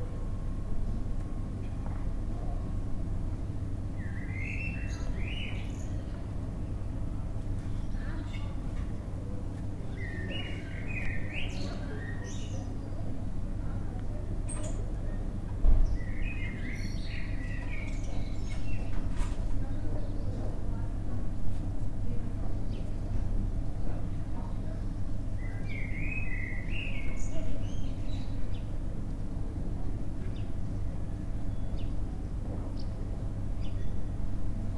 Eguisheim, Place de l'Eglise, Frankreich - Church's place
Ambience in a quiet place in a quiet village: Some birds, some voices, traffic noise in the distance.